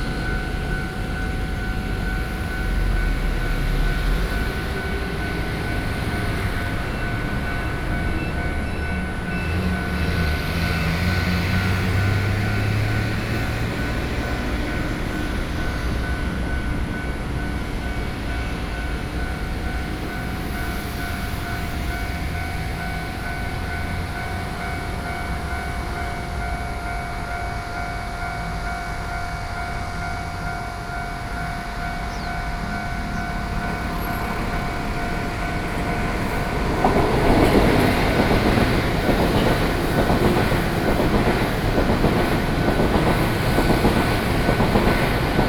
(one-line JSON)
{"title": "Sec., Zhongshan Rd., Shulin Dist., New Taipei City - On the bridge", "date": "2012-07-08 11:17:00", "description": "Traveling by train, On the bridge, Railway crossings, Traffic Sound\nSony PCM D50+ Soundman OKM II", "latitude": "24.98", "longitude": "121.40", "altitude": "28", "timezone": "Asia/Taipei"}